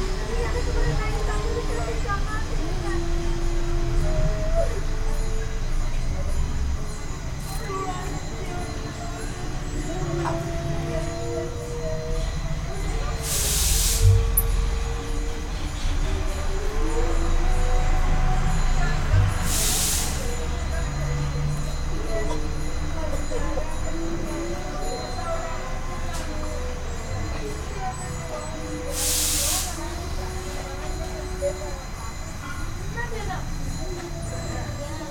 Saundatti, Near Khadi Kendra, Muezzin / bells / insect
India, Karnataka, Saundatti, Muezzin, bells, insect
Karnataka, India, 19 February 2011